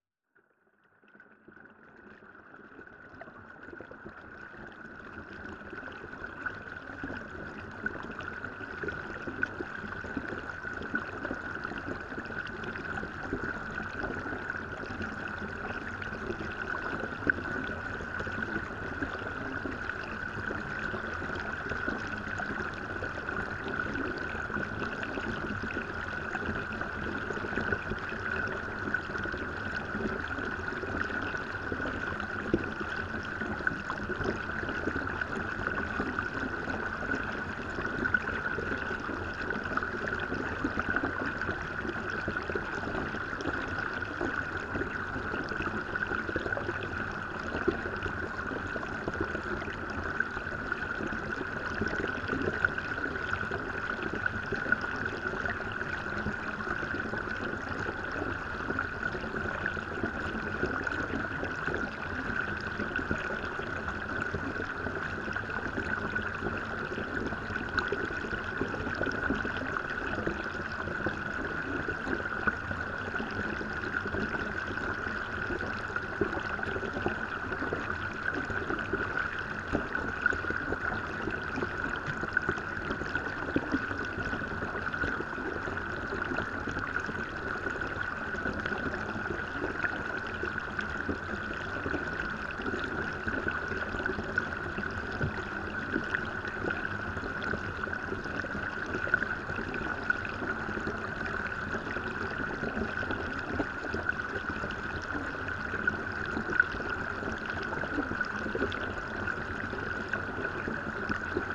Recorded with a pair of JrF D-Series hydrophones into a Marantz PMD661
CO, USA, 2 January 2016, ~09:00